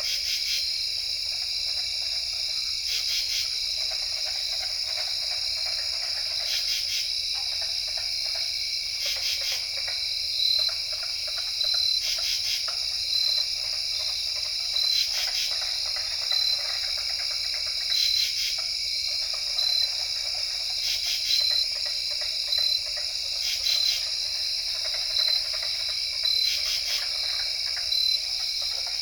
Wharton State Forst, NJ, USA - Bogs of Friendship, Part One
Katydids and carpenter frogs at the abandoned cranberry bogs of Friendship, NJ, located in Wharton State Forest, New Jersey; the heart of the pine barrens. This is an old recording, but I only recently discovered aporee. Microtrack recorder and AT3032 omnidirectionals